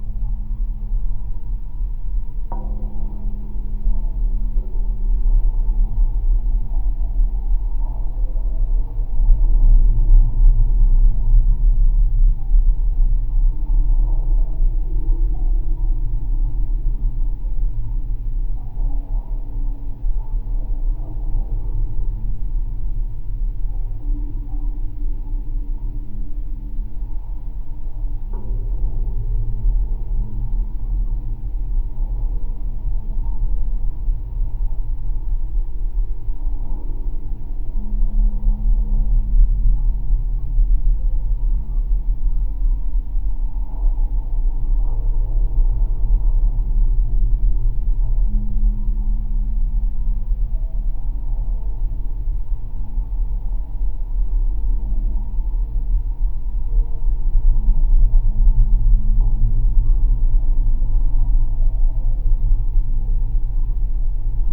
Ventspils, Latvia, pier fence
Geophone on pier fence. Very low frequancies.